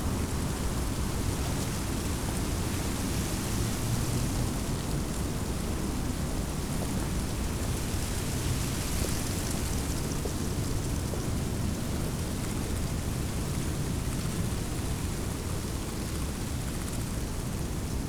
the city, the country & me: february 8, 2012
Solingen, Germany, 8 February 2012